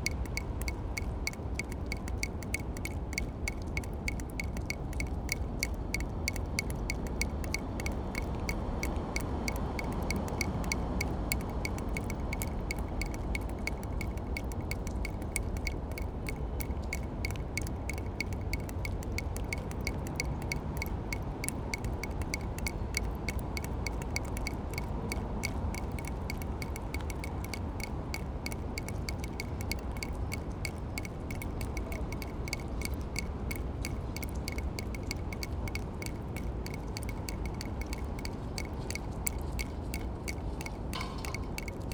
Prague, under Most Legií - drain pipe dripping
water drips from a drain pipe onto a pebble stone. recorded during the Sounds of Europe Radio Spaces workshop.
Prague, Czech Republic